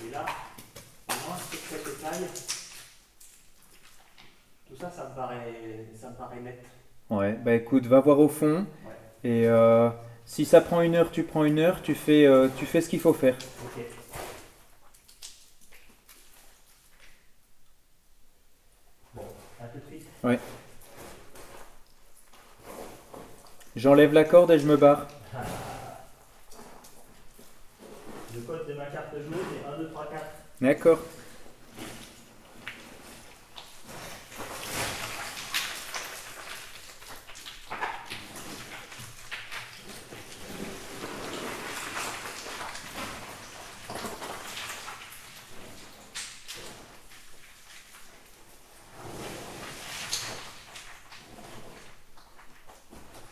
Saint-Martin-le-Vinoux, France - Lachal mine
We are exploring a very inclined tunnel. It's hard to find a way inside the underground mine.